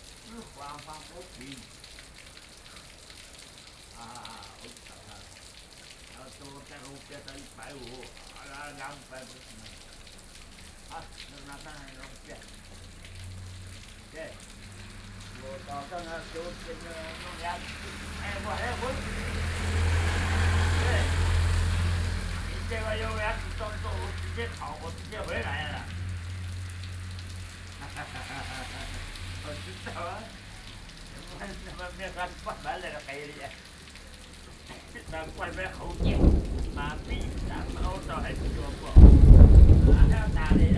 泰雅商號, 大同鄉崙埤村 - Rainy Day
In a small shop doorway, Rainy Day, Thunderstorm, Small village, Traffic Sound, At the roadside
Sony PCM D50+ Soundman OKM II
Datong Township, 大同(崙埤)